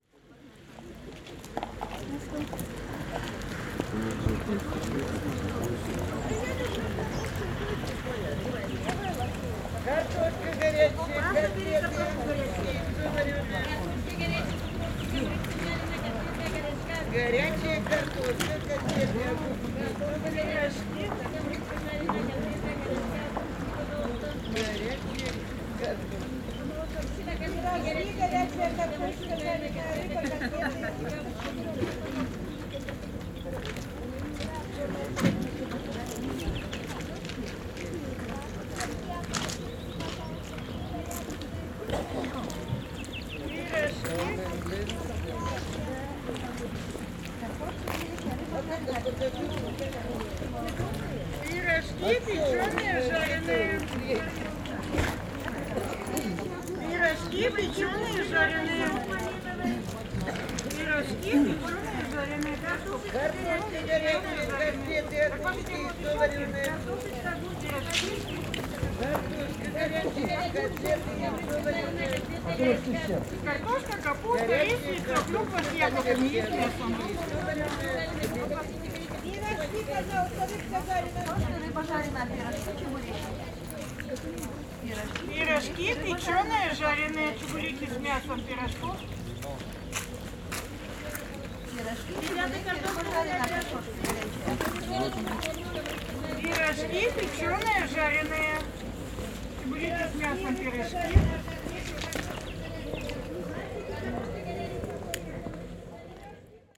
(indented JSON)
{
  "title": "Kotlas, Oblast d'Arkhangelsk, Russie - Pirojki",
  "date": "2013-05-20 15:19:00",
  "description": "ORTF stéréo system with pirojki jarenoe.",
  "latitude": "61.25",
  "longitude": "46.62",
  "altitude": "79",
  "timezone": "Europe/Moscow"
}